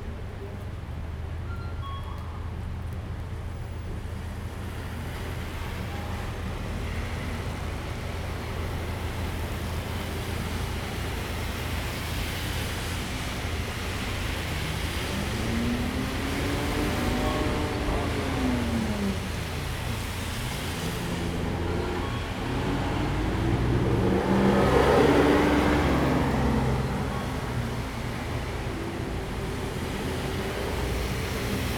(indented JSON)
{
  "title": "Datong Rd., Chenggong Township - Rain and Traffic Sound",
  "date": "2014-09-08 16:10:00",
  "description": "Traffic Sound, The sound of rain, Thunder, In front of the convenience store\nZoom H2n MS+XY",
  "latitude": "23.10",
  "longitude": "121.38",
  "altitude": "34",
  "timezone": "Asia/Taipei"
}